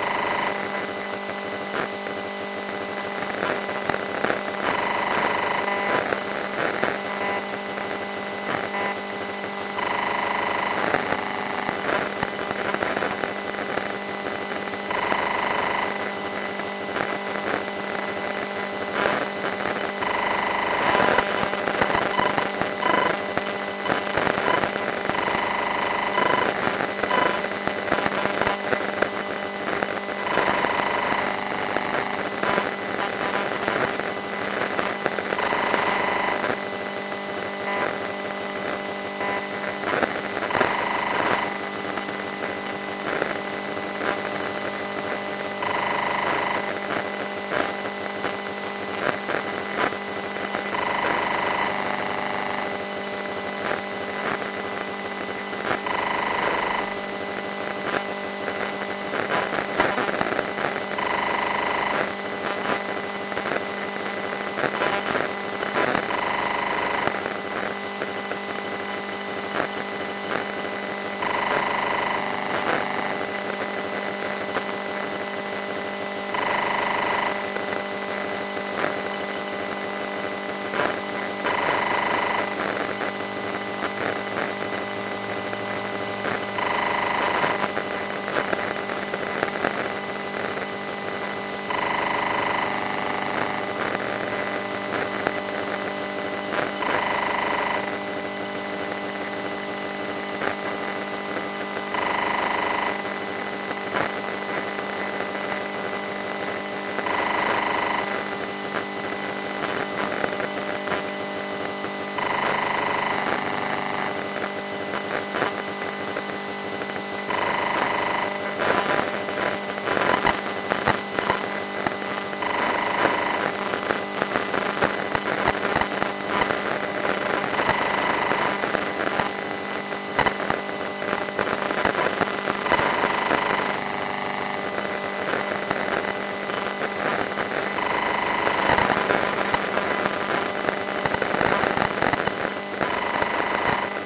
Electromagnetic field recorded inside a gsm antenna machinery. Communications are encrypted and impossible to decode only with a small recorder.
Court-St.-Étienne, Belgium, 16 March, 12:05